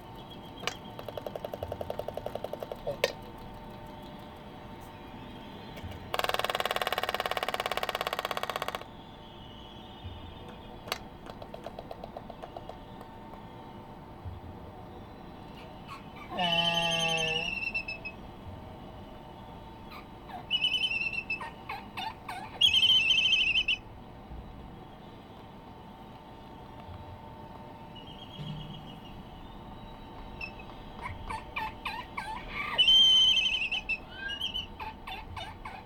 United States Minor Outlying Islands - Laysan albatross dancing ...
Sand Island ... Midway Atoll ... laysan albatross dancing ... background noise from voices ... carts ... a distant fire alarm ... Sony ECM 959 one point stereo mic to Sony Minidisk ...